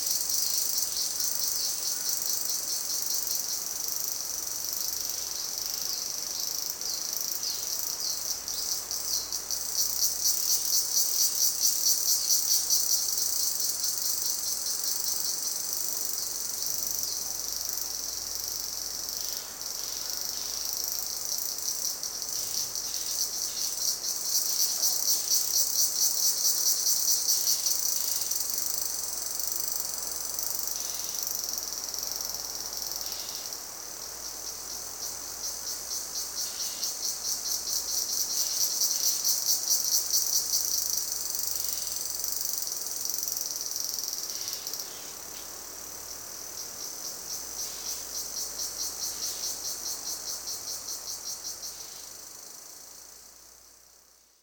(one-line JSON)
{"title": "Koh Bulon Lae, Thailand - drone log 05/03/2013", "date": "2013-03-05 12:51:00", "description": "cicadas around noon, Koh Bulone\n(zoom h2, binaural)", "latitude": "6.83", "longitude": "99.54", "altitude": "35", "timezone": "Asia/Bangkok"}